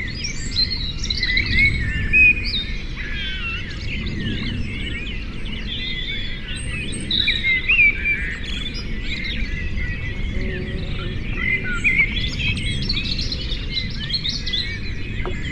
Dawn, Malvern, UK - Dawn
Recorded overnight on the 5-6th June as an experiment by hanging the microphone rig out of the dormer window facing east towards the back garden. The mics are flat against the roof tiles which seems to enhance the stereo separation and maybe even a certain amount of boundary effect. The fox at the beginning has an echo I have not heard before, probably from the side of the Malvern Hills. The many jets are because of an international flight line a few miles south in Gloucestershire and is unusually busy possibly because of relaxed Covid restrictions in the UK. The cuckoo is the first I have heard for a few years. The ducks are 14 chicks, now almost fledged and ready to fly on our pond. This is the second year Mallard have nested here. This section of the overnight recording starts at 4.05am and on this day 77 years ago my Uncle Hubert was preparing to go ashore at Arromanches. I wonder what sounds he would have heard.
West Midlands, England, United Kingdom, 6 June 2021, 04:00